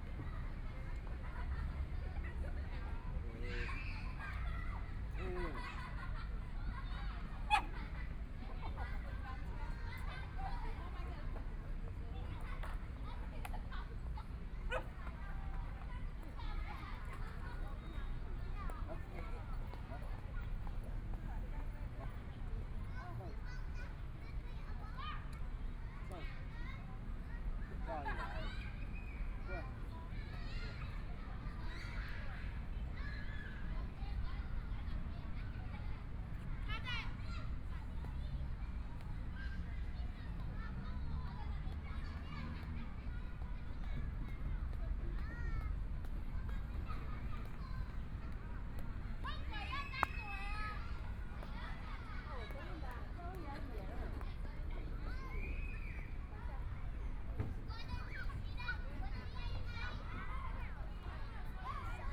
榮星公園, Zhongshan District - Kids sounds
Kids play area, Binaural recordings, Zoom H4n+ Soundman OKM II
Taipei City, Taiwan, 20 January 2014